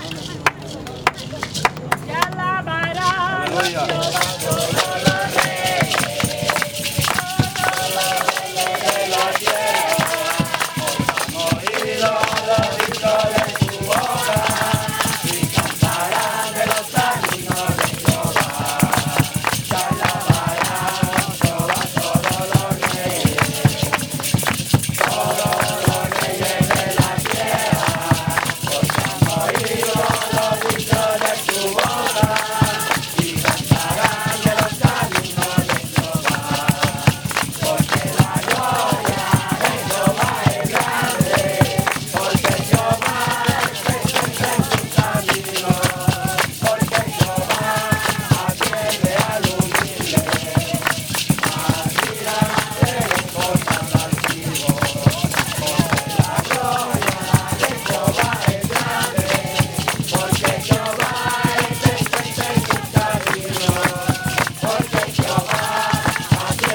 Malecón Maldonado, Iquitos, Peru - youth with mission evangelise and sing another song.
youth with mission evangelise and sing another song.
17 February 2001, 19:32